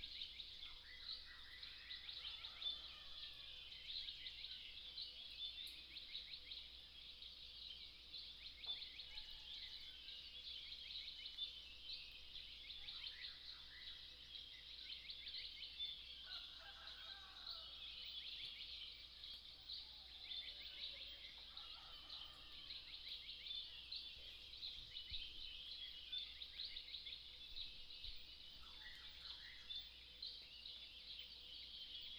Early morning, Birdsong, Chicken sounds, Dogs barking, at the Hostel